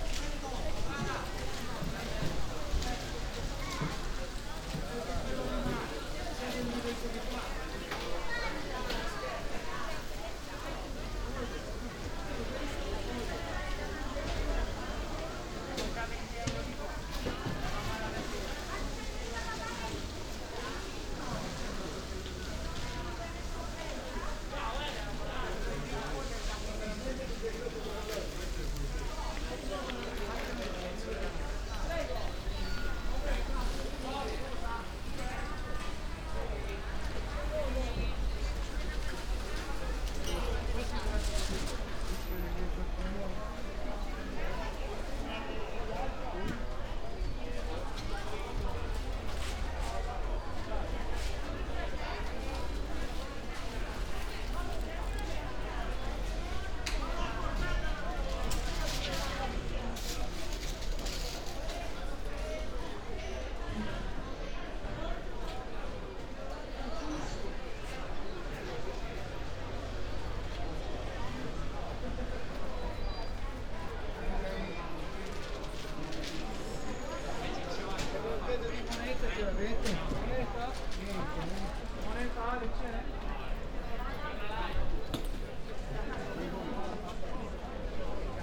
Ascolto il tuo cuore, città. I listen to your heart, city. Several chapters **SCROLL DOWN FOR ALL RECORDINGS** - “Outdoor market in the square one year later at the time of covid19”: Soundwalk
“Outdoor market in the square one year later at the time of covid19”: Soundwalk
Chapter CLXIX of Ascolto il tuo cuore, città. I listen to your heart, city.
Friday, April 23rd 2021. Shopping in the open air square market at Piazza Madama Cristina, district of San Salvario, Turin, one year after the same walk on the same date in 2020 (54-Outdoor market in the square); one year and forty-four days after emergency disposition due to the epidemic of COVID19.
Start at 11:27 a.m., end at h. 11: 43 a.m. duration of recording 16’23”
The entire path is associated with a synchronized GPS track recorded in the (kml, gpx, kmz) files downloadable here: